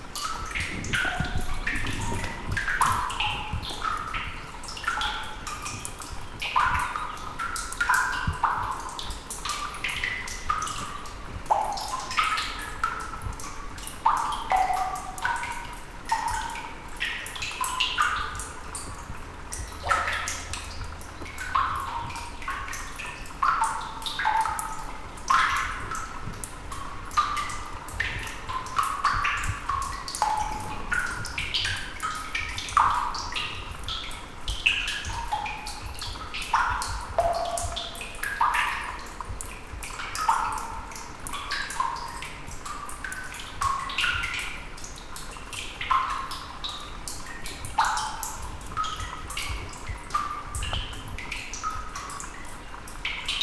Madeira, Levada do Norte - cave
water dripping in a small mountain cave
Portugal